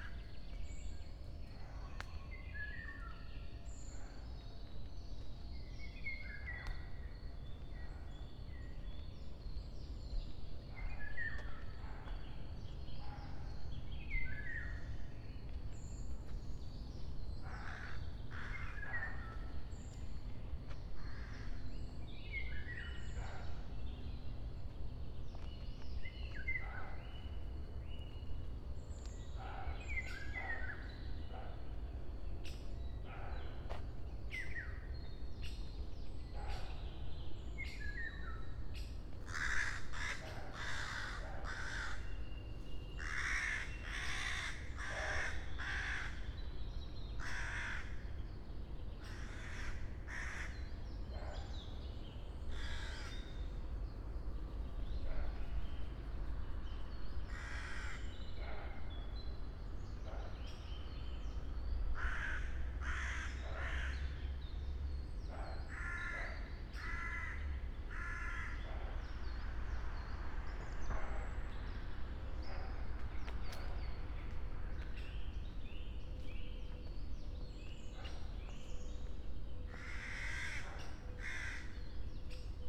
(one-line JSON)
{"title": "near small pond, Piramida, Maribor - walk for Diana and Actaeon", "date": "2013-06-21 06:35:00", "description": "deer, crows, summer solstice morning", "latitude": "46.57", "longitude": "15.65", "altitude": "336", "timezone": "Europe/Ljubljana"}